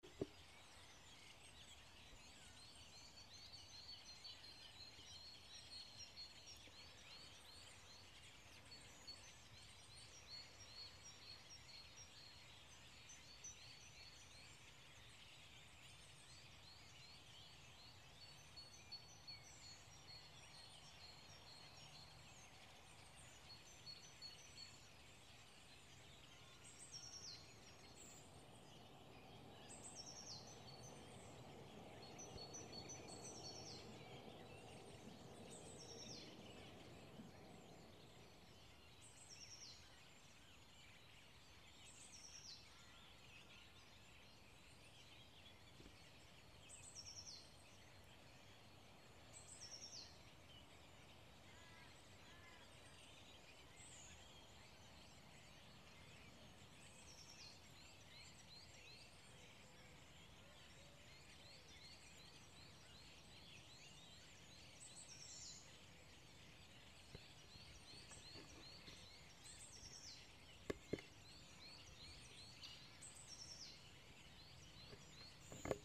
Rissen, Hamburg, Deutschland - the bird in springmood
although its about zero Celsius